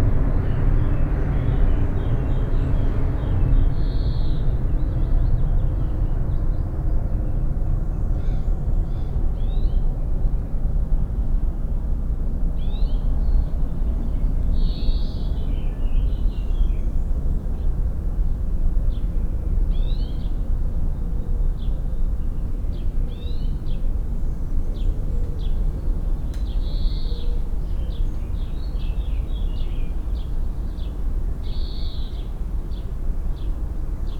Niévroz, Rue Henri Jomain, blackbird
Niévroz, France, 30 April 2011